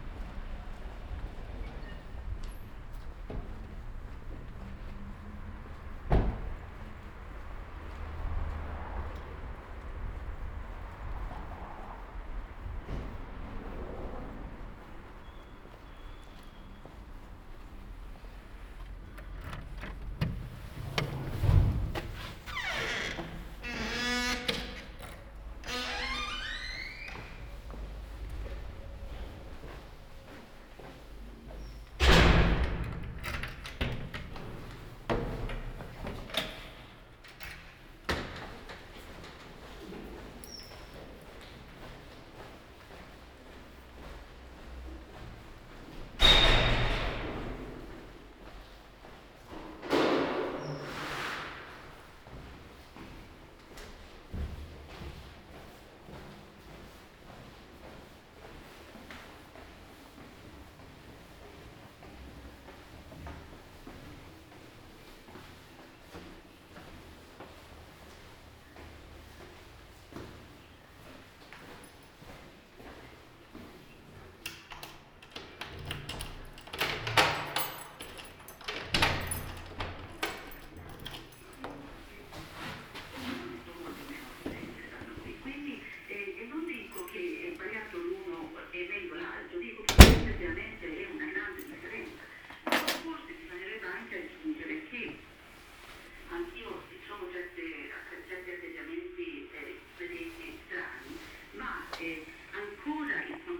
Ascolto il tuo cuore, città. I listen to your heart, city. Several chapters **SCROLL DOWN FOR ALL RECORDINGS** - “Shopping au marché ouvert sur la place at the time of covid19” Soundwalk
“Shopping au marché ouvert sur la place at the time of covid19” Soundwalk
Chapter XXXI of Ascolto il tuo cuore, città. I listen to your heart, city.
Thursday April 2nd 2020. Shopping in the open air square market at Piazza Madama Cristina, district of San Salvario, Turin, twenty three days after emergency disposition due to the epidemic of COVID19.
Start at 10:44 a.m., end at h. 11:11 a.m. duration of recording 26’58”
The entire path is associated with a synchronized GPS track recorded in the (kml, gpx, kmz) files downloadable here: